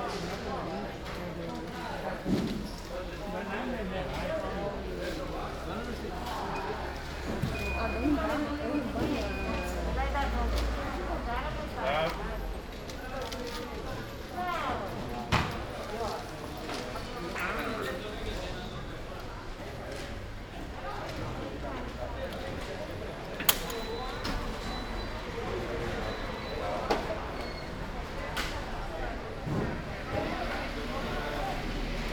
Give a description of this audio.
“Outdoor market on Friday in the square at the time of covid19” Soundwalk, Chapter CXXIII of Ascolto il tuo cuore, città. I listen to your heart, city. Friday, August 7th, 2020. Walking in the outdoor market at Piazza Madama Cristina, district of San Salvario, Turin four months and twenty-seven days after the first soundwalk (March 10th) during the night of closure by the law of all the public places due to the epidemic of COVID19. Start at 8:49 a.m., end at h. 9:04 a.m. duration of recording 15:15”, The entire path is associated with a synchronized GPS track recorded in the (kml, gpx, kmz) files downloadable here: